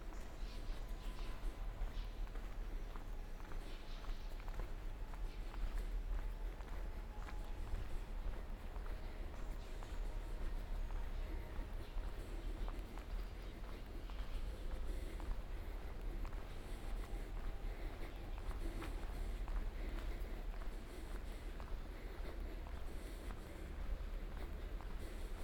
Carrer de lAlcalde Benjamí Cervera, Portbou, Girona, Spagna - PortBou Walk day1
Walking at PortBou on the trace of Walter Benjamin, September 28 2017 starting at 10 a.m. Bar Antonio, on the seaside, ramble, tunnel, out of tunnel, tunnel back, ramble, former Hotel Francia.
Portbou, Girona, Spain, 28 September, 10:00